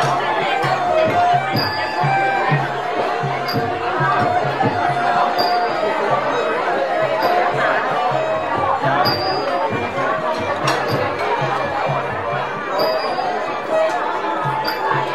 เชียงใหม่, Thailand (Khong dance dinner) 2
Khong dance dinner in Old culture center, Chiang Mai; 26, Jan, 2010
จังหวัดเชียงใหม่, ราชอาณาจักรไทย